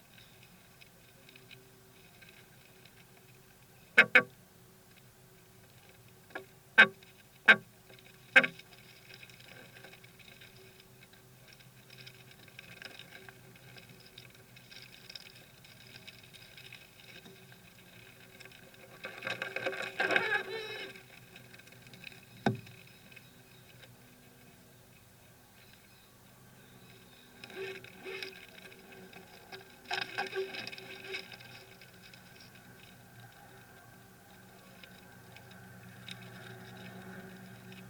Linden, Randburg, South Africa - Beetles at work in the woodwork!
Beetles coming and going to their nest in the woodwork of the patio awning. Piezo contact mics to Sony ICD-UX512